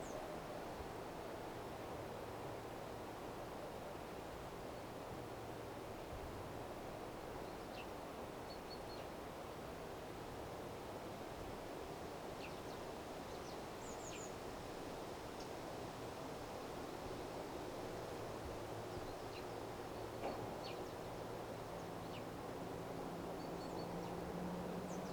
Barwiny, Ruiny - House ruins outside, far from village
13 October 2009, ~15:00